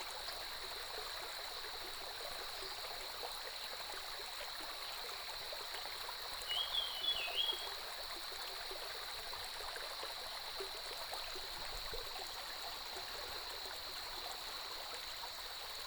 中路坑溪, 埔里鎮桃米里 - Stream and Birdsong
Birdsong, Stream, Cicadas cry, Early morning
Puli Township, Nantou County, Taiwan, June 2015